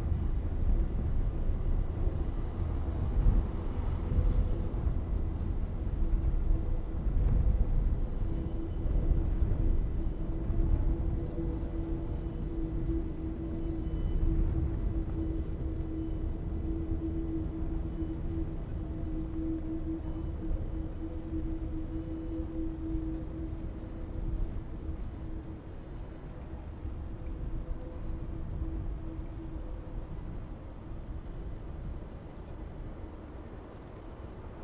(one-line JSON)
{"title": "水防道路五段, Zhubei City - wind and Iron railings", "date": "2017-08-26 11:42:00", "description": "On the bank, wind, Iron railings, sound of birds\nZoom H2n MS+XY", "latitude": "24.86", "longitude": "120.95", "altitude": "5", "timezone": "Asia/Taipei"}